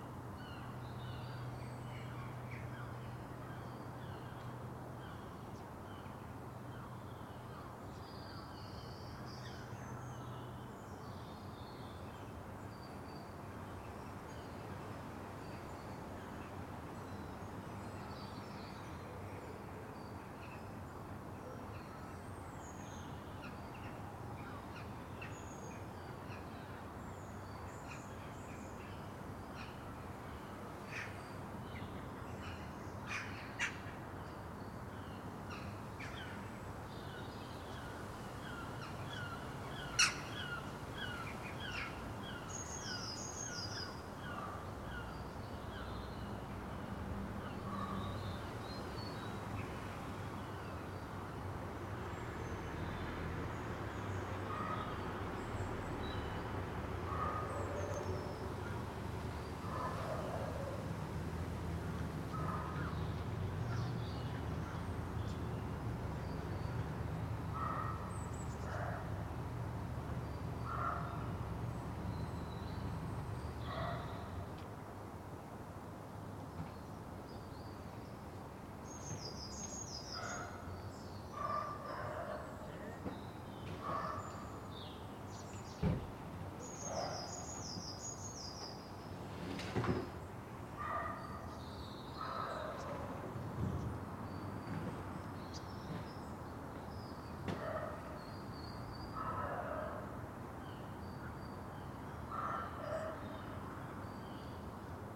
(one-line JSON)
{"title": "Contención Island Day 13 inner west - Walking to the sounds of Contención Island Day 13 Sunday January 17th", "date": "2021-01-17 09:25:00", "description": "The Poplars\nStand in a corner\nin front of a purple-doored garage\nTwo cars appear\nto park along the alley\nHerring gulls cry", "latitude": "55.00", "longitude": "-1.62", "altitude": "69", "timezone": "Europe/London"}